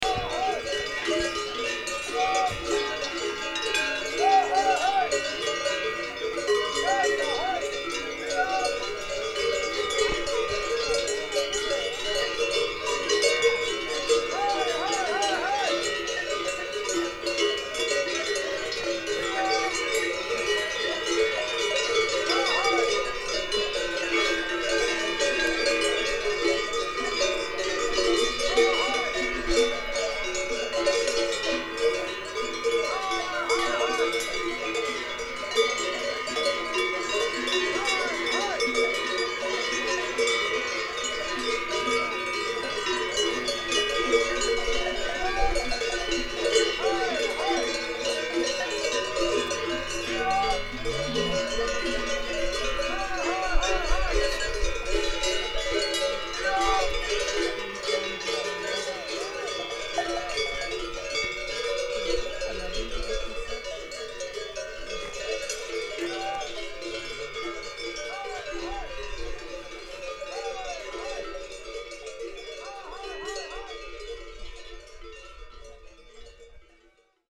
Ascending cows and their farmer. recorded at 2000 meters up the mountain. WLD
Gsteigwiler, Switzerland, 1 August 2009